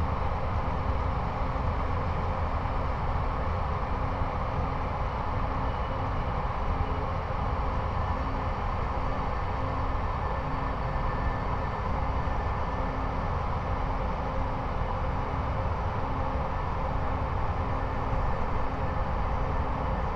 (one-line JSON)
{"title": "lignite mine, near Mariánské Radčice / Bílina, Tschechien - open pit drone", "date": "2017-09-23 00:10:00", "description": "constant sounds from conveyers and excavators at the open pit, around midnight (Sony PCM D50, Primo EM172)", "latitude": "50.56", "longitude": "13.70", "altitude": "266", "timezone": "Europe/Prague"}